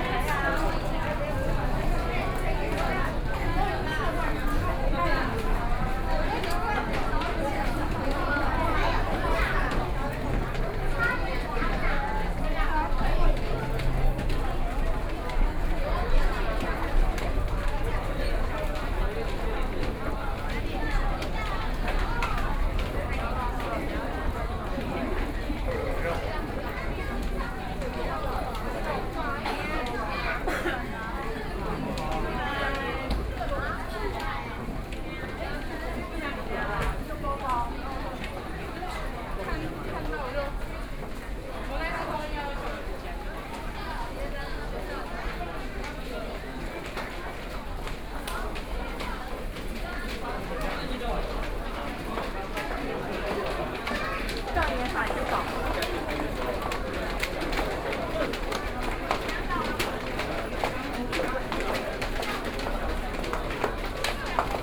Ximen Station, Taipei - soundwalk
Walk from the station entrance into the station via the underpass, Waiting at the train station platform, Binaural recordings, Sony PCM D50 + Soundman OKM II